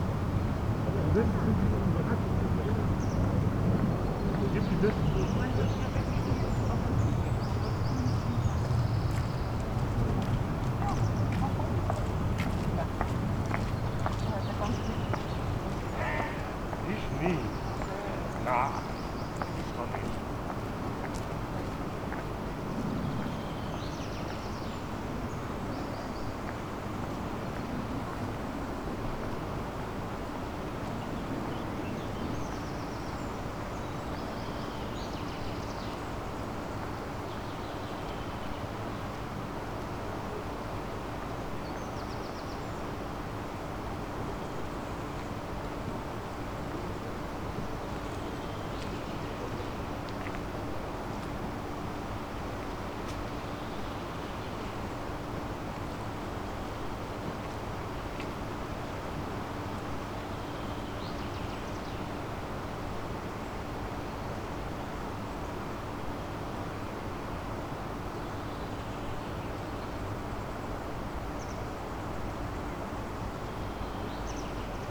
solingen-müngsten: müngstener brückenweg - the city, the country & me: on the bank of the wupper
strange situation: this place has really changed in recent years. what you see on the maps no longer exists. so it was difficult to find the correct position. sound of the wupper river and the nearby weir, tourists and a plane crossing the sky...
the city, the country & me: june 18, 2011